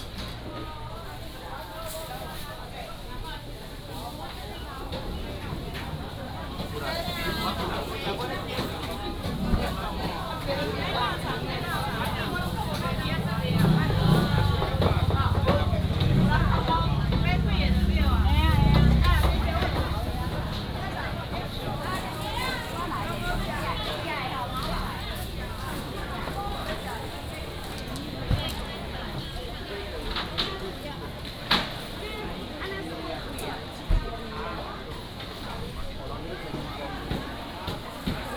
Walk through the market, Traffic sound, Selling voice
Changhua County, Yuanlin City, 民生路83巷6弄11號, 25 January, 08:48